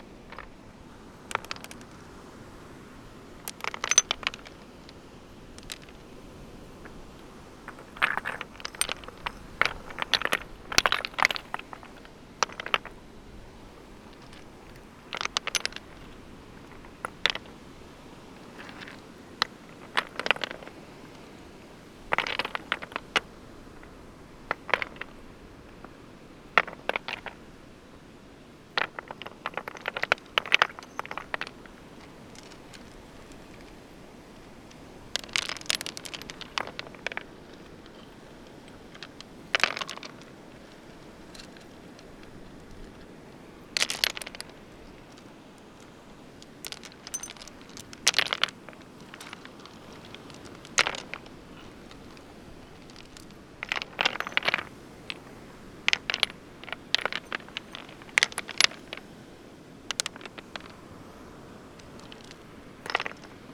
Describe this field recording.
moving about some rocks and pebbles at the beach. shuffling and rummaging. making a pile, then taking it apart, throwing some of the smaller ones over the bigger rocks.